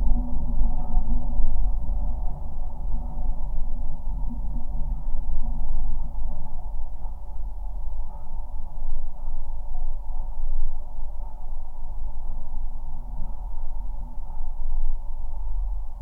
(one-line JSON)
{"title": "Daugavpils, Latvia, on closed bridge", "date": "2020-01-26 14:10:00", "description": "new LOM geophone on new and still closed for cars bridge's metallic construction", "latitude": "55.88", "longitude": "26.53", "altitude": "104", "timezone": "Europe/Riga"}